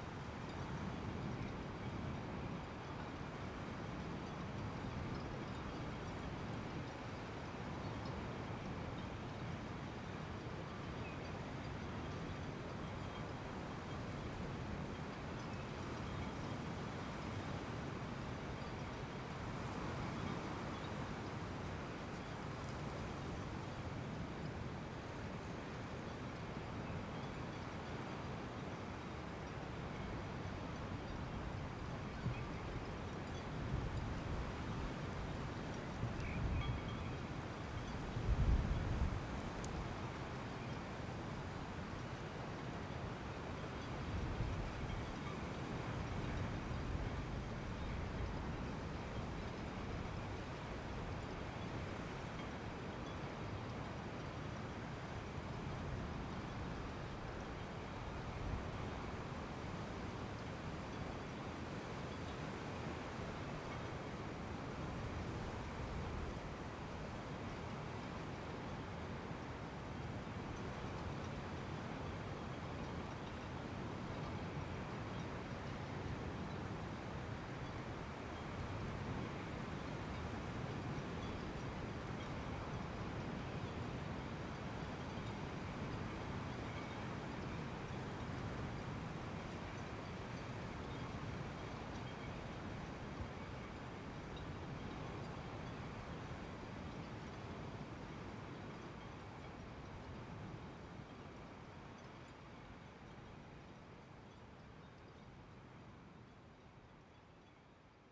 Avenue Michel Crépeau, La Rochelle, France - Entrance channel south quay La Rochelle - 6:27 am
P@ysage Sonore La Rochelle .
4 x DPA 4022 dans 2 x CINELA COSI & rycote ORTF . Mix 2000 AETA . edirol R4pro
Nouvelle-Aquitaine, France métropolitaine, France, 28 April 2020, 06:28